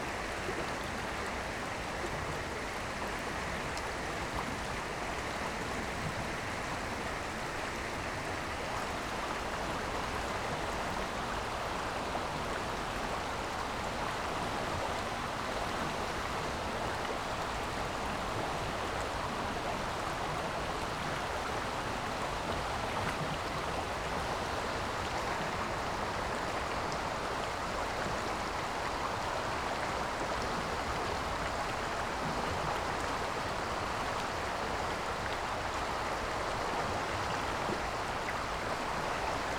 Kerkerbachtal, Hofen, Deutschland - Kerkerbach creek ambience
small river / creek Kerkerbach near village Hofen, water flow from about 5m above, below trees
(Sony PCM D50, Primo EM272)